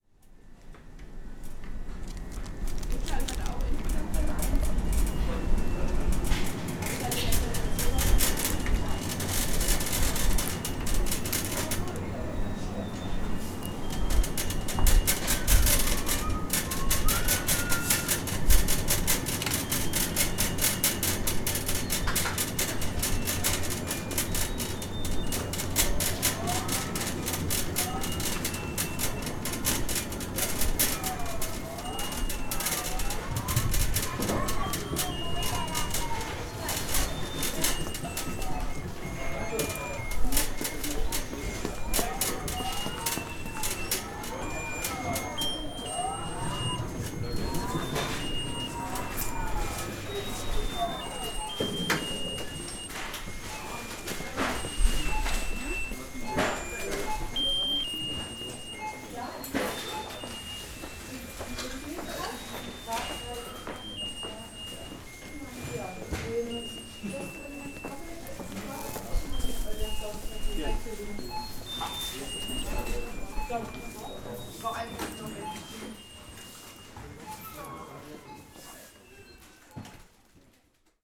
berlin, ohlauer straße: supermarkt - the city, the country & me: supermarket
pushing my trolley through the supermarket following a little child with a music birthday card
the city, the country & me: february 16, 2011
Berlin, Germany, 16 February 2011